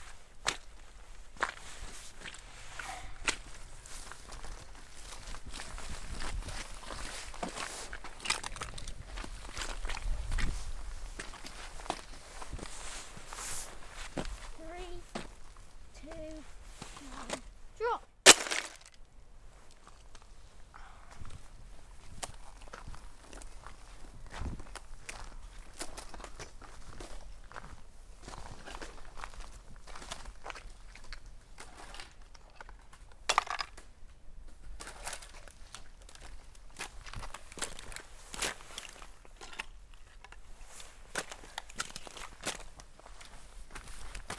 Redmires, Sheffield, UK - Ice Squelch & mini-recordist
Playing in the semi frozen mud with Mini-Recordist.
England, United Kingdom, 15 January, 11:50